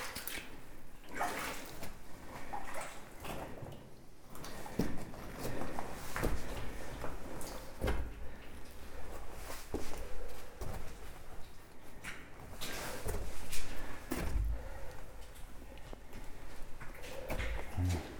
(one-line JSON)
{"title": "Moyeuvre-Grande, France - Asphyxiant tunnel", "date": "2016-12-10 11:50:00", "description": "In the underground iron mine of Moyeuvre-Grande, walking towards the flooded part of the mine. There's a very-very strong lack of oxygen (16,4% to 15%). It's dangerous and you can hear me walking like a galley slave, with high difficulties to breathe. We know that we have no more than 10 minuts to verify the entrance of the called Delivrance tunnel, just because of the lack of oxygen. We encountered a defeat because we would need a boat. But a boat would mean more than 20 minuts, it's impossible, death would be near. The bip you hear is the oxygen detector and the level is so dreadful that we made a shut-down on the automatical alarm - it would be shouting everytime. It was, for sure, a critical incursion in this part of the mine. Finally, it took us 12 minuts to verify the impossibility to go beyond the asphyxiant gas district. Recorded binaural in a extremely harsh period, sorry that this recording is not perfect.", "latitude": "49.26", "longitude": "6.04", "altitude": "256", "timezone": "GMT+1"}